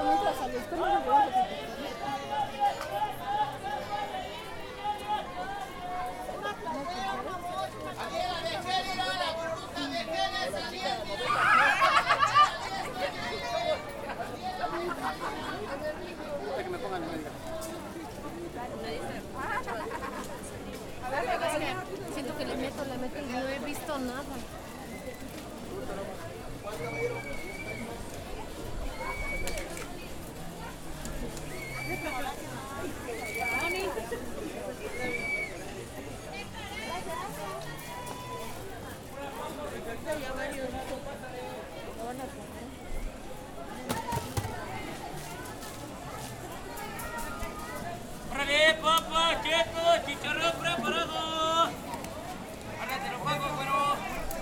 Av H. Colegio Militar, Bosque de Chapultepec I Secc, Ciudad de México, CDMX, México - Vendedores del Bosque de Chapultepec
Caminata entre los puestos del Bosque de Chapultepec, justo afuera del zoológico. Domingo 15hrs.
Ciudad de México, CDMX, Mexico, 29 July, 15:00